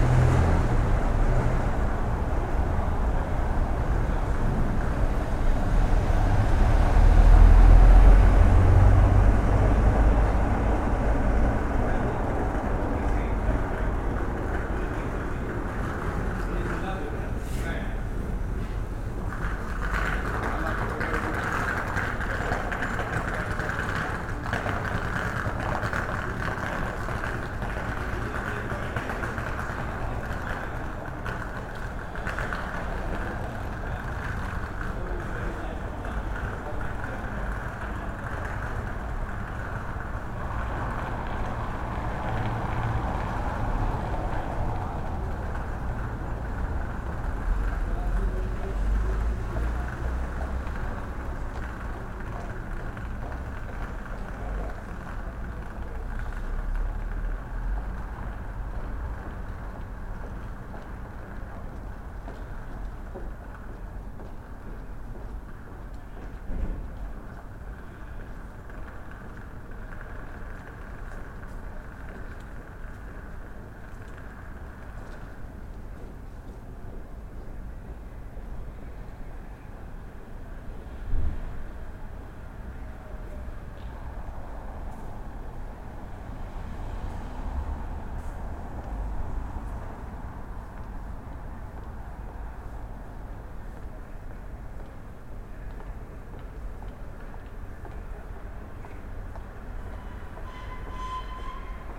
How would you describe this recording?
9am, street sounds recorded from my 1st floor hotel room window. Just as it started to lightly snow.